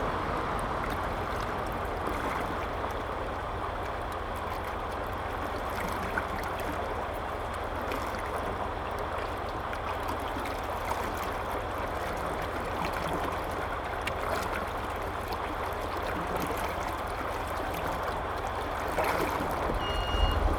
Irrigation waterway, Traffic Sound, Very hot weather
Zoom H2n MS+ XY